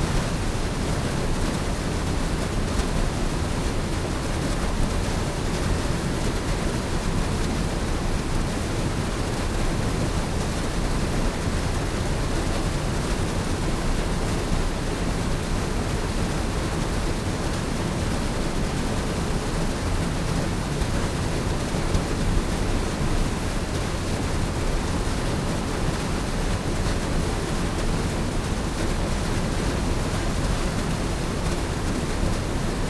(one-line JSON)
{"title": "Reading, UK - mill race ...", "date": "2021-11-05 18:30:00", "description": "mill race ... the old mill ... dpa 4060s clipped to bag to zoom h5 ... on the walkway above the sluices ...", "latitude": "51.39", "longitude": "-1.15", "altitude": "58", "timezone": "Europe/London"}